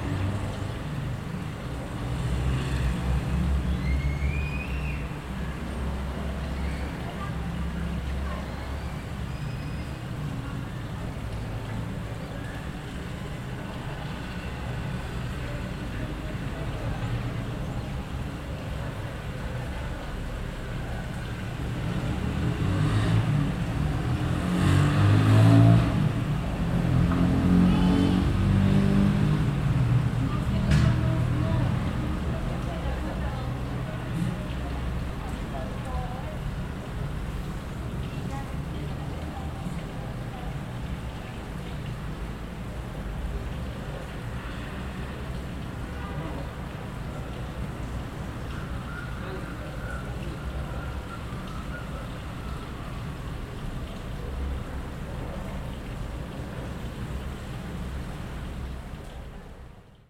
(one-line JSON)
{"title": "Cl., Fontibón, Bogotá, Colombia - Ambient sound outside my house", "date": "2021-05-10 13:00:00", "description": "TONIC OR FUNDAMENTAL SOUND: WIND\nSOUND SIGNALS: VOICES, BALL BOUNCE, GIRL SCREAMS, BIRDS, CARS, MOTORCYCLE, CAR HORN, CAR ENGINE, MOTORCYCLE ENGINE\nSOUND MARK: URBAN", "latitude": "4.67", "longitude": "-74.12", "altitude": "2548", "timezone": "America/Bogota"}